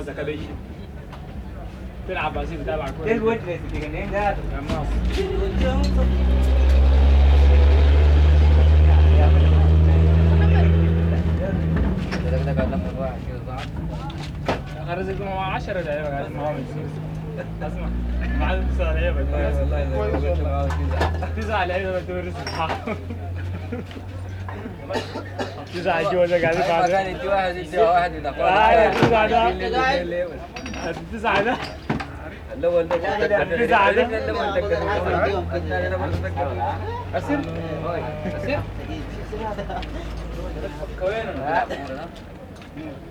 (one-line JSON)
{"title": "Unnamed Road, الدمازين،، Ad Damazin, Sudan - Drinking tea in ed-Damazin", "date": "1987-04-14 15:02:00", "description": "Drinking tea in ed-Damazin. Almost everywhere you can drink tea on the streets of Sudan. Black tea or, like here, kirkede (hibiscus), hot or cold. Healthy and delicious.", "latitude": "11.80", "longitude": "34.35", "altitude": "485", "timezone": "Africa/Khartoum"}